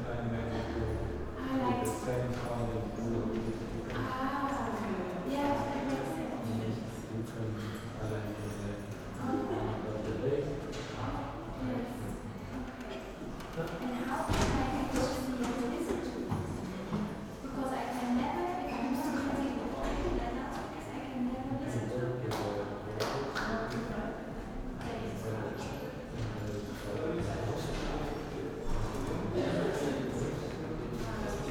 SEAM Studio, Weimar, Deutschland - foyer ambience
SEAM Werkstattstudio, concert pause, foyer ambience, students talking
(Sony PCM D50)
Weimar, Germany, 26 January 2016, 20:55